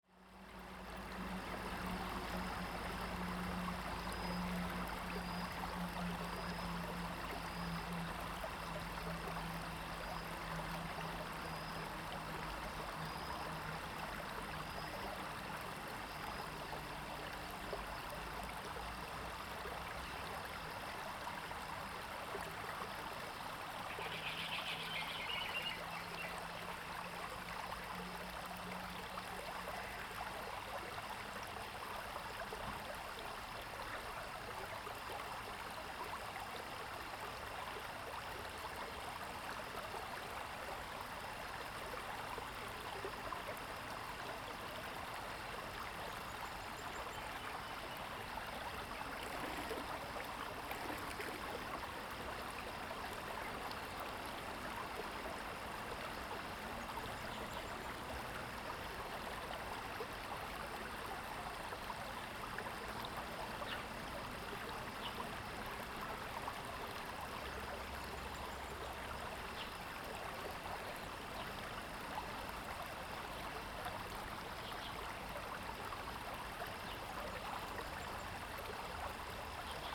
TaoMi River, 桃米里 Nantou County - Next to the stream
Bird calls, Stream sound
Zoom H2n MS+XY
Nantou County, Puli Township, 桃米巷29號, 30 April